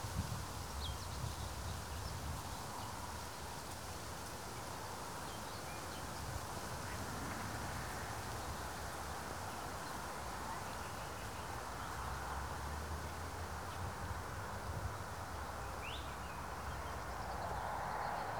Morasko, Deszczowa roads - two trees
standing between two birches in rather athletic gusts of wind. thousands of tiny leaves spraying a blizzard of subtle noise and pulsating rustle. 120 degrees.